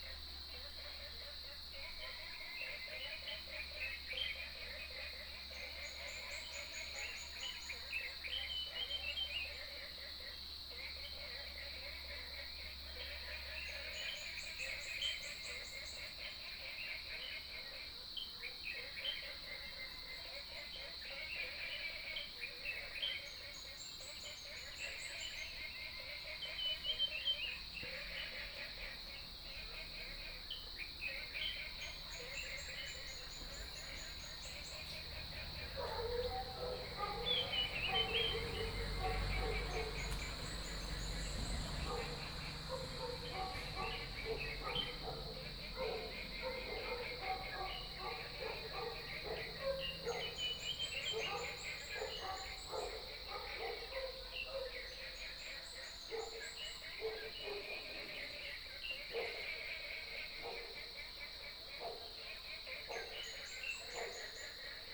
種瓜路6號, Puli Township - Early morning
Bird calls, Frogs sound, Early morning, Dogs barking